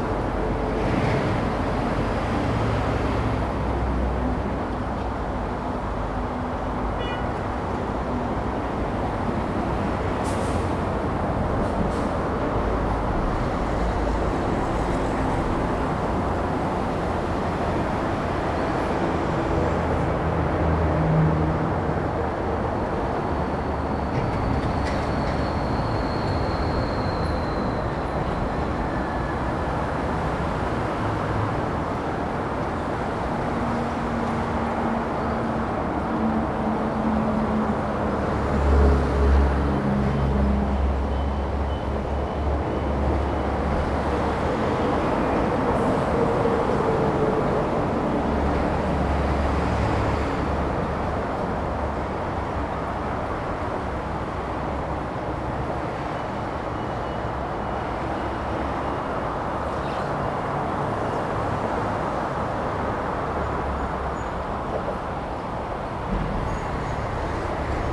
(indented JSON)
{
  "title": "大圳路 Dazun Rd., Zhongli Dist. - traffic sound",
  "date": "2017-08-02 15:02:00",
  "description": "Under the highway, traffic sound\nZoom H2n MS+XY",
  "latitude": "24.99",
  "longitude": "121.23",
  "altitude": "109",
  "timezone": "Asia/Taipei"
}